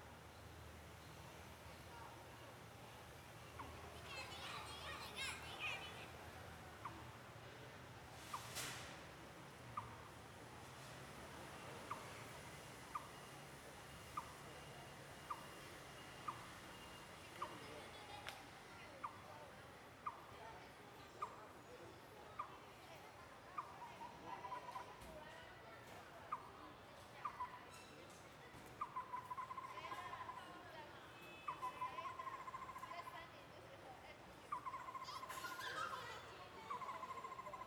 Birdsong, in the Park, Traffic Sound, Children's play area
Please turn up the volume
Zoom H6 M/S